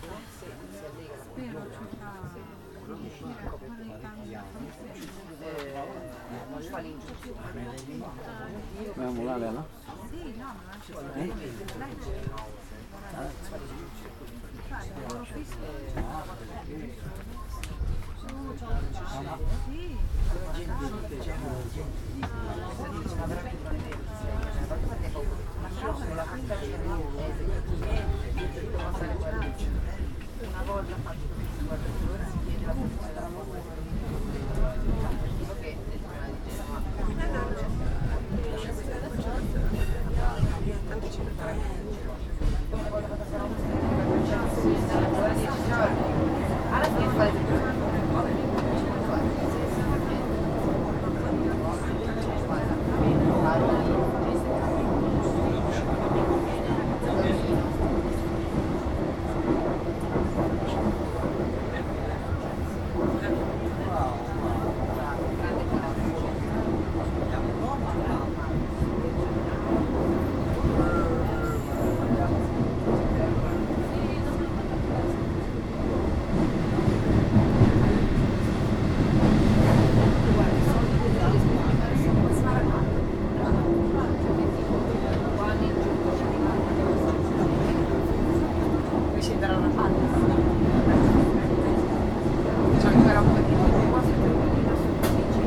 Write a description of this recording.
Zugfahrt von Camogli nach Sestri Levante. Lebhafte Diskussionen der Zugreisenden. Tunnelgeräusche und Durchsage 'nächster Halt: Rapallo' (natürlich auf italienisch...)